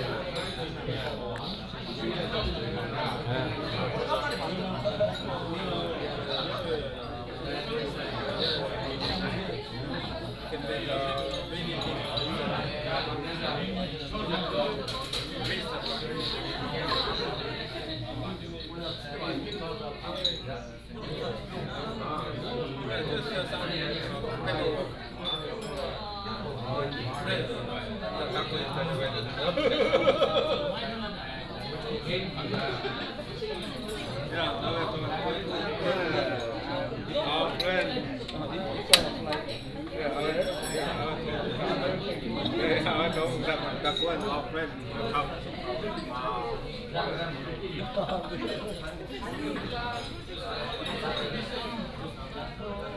Bonghwang-dong, Gimhae-si - At the restaurant
At the restaurant, Many Asia artists are drink and chat
17 December 2014, 21:50